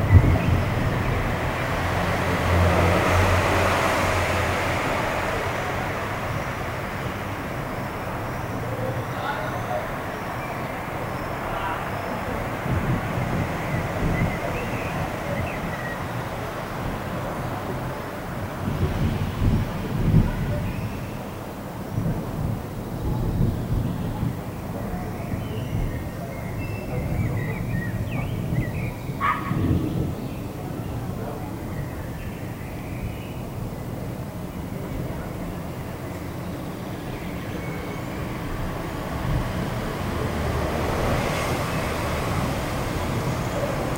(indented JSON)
{
  "title": "koeln, evening atmosphere",
  "description": "recorded june 22nd, 2008, around 8 p. m.\nproject: \"hasenbrot - a private sound diary\"",
  "latitude": "50.97",
  "longitude": "6.94",
  "altitude": "50",
  "timezone": "GMT+1"
}